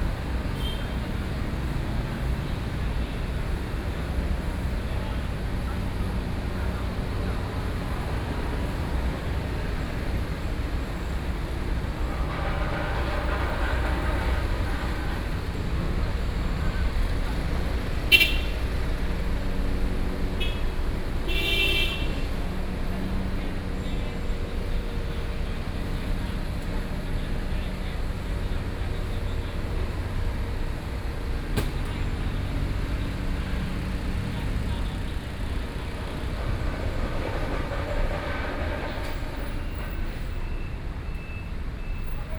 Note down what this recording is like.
Road construction, Sony PCM D50 + Soundman OKM II